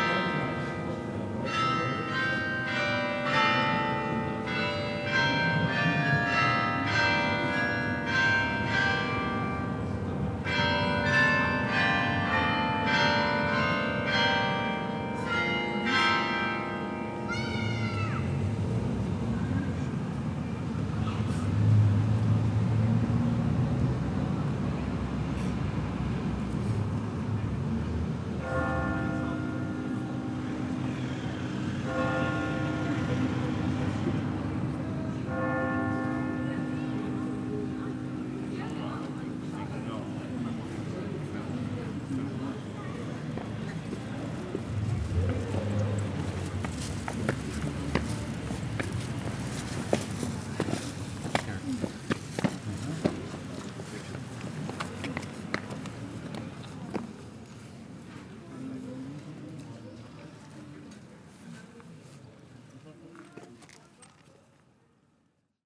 The bells of the Sint-Servaasbasiliek, the cathedral of Maastricht.
Maastricht, Netherlands, 20 October 2018